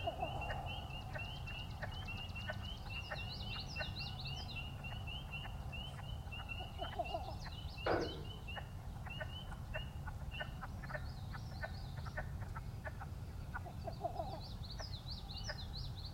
Lower Alloways Creek, NJ, USA - salem river
Dusk recording along closed section of road by a noisy, condemned bridge. Reedy, tidal wetlands.The bridge pops while birds and frogs sing.